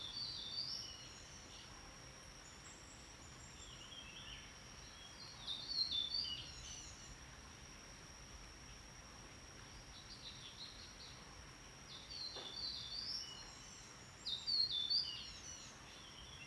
Birds singing, In the bamboo forest edge, Sound streams, Insects sounds
Zoom H2n MS+XY
Nantou County, Taiwan, 28 April 2016